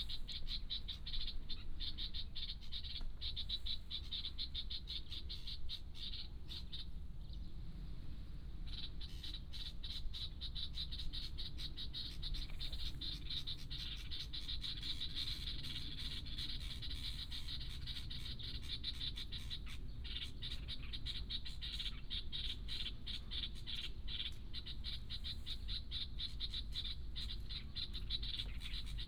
Huxi Township, Penghu County - Birds singing
In the parking lot of the beach, Birds singing